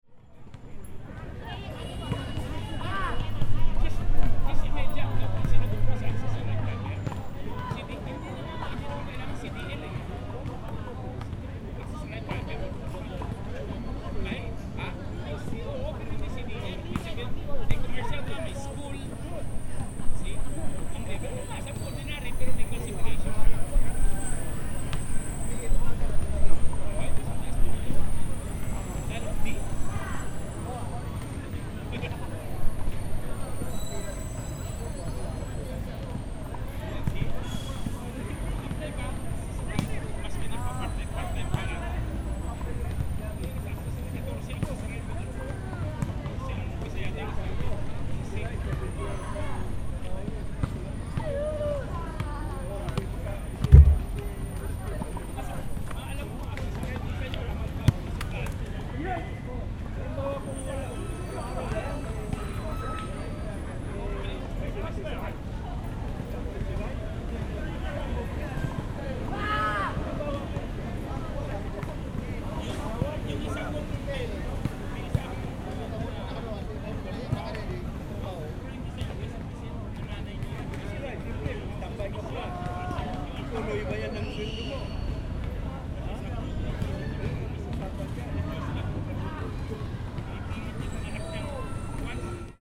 Clement Clarke Moore Park, Elmhurst, Queens, NY, USA - Clement Clarke Moore Park
Overcast day at the park. A good amount of physical and social activity by park goers. I was recording at a central bench at 120 degree angle pointing toward the basketball courts. The bench mid way through gets hit with volley ball and thus the low bass hit. Using a zoom H4n.
13 July 2013